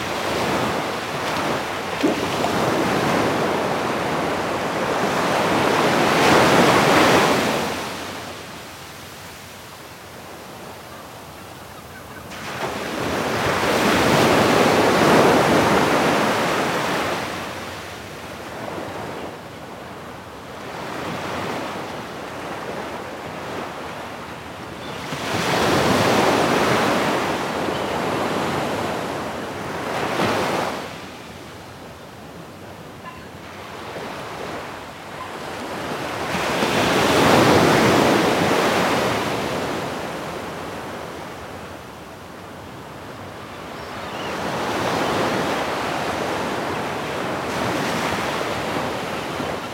Caleta Portales - Sea waves
Sea Waves recording from the beach close to Caleta Portales.
Recording during the workshop "A Media Voz" by Andres Barrera.
MS Setup Schoeps CCM41+CCM8 in a Zephyx Cinela Windscreen